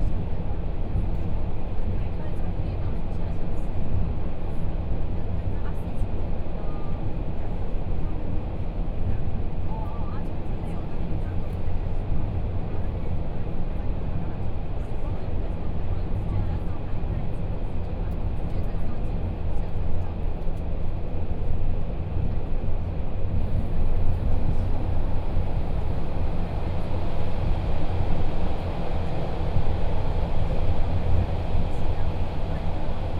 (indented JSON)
{
  "title": "Beinan Township, Taitung County - Taroko Express",
  "date": "2014-01-18 11:12:00",
  "description": "Train message broadcasting, Interior of the train, from Taitung Station to Shanli Station, Binaural recordings, Zoom H4n+ Soundman OKM II",
  "latitude": "22.82",
  "longitude": "121.12",
  "timezone": "Asia/Taipei"
}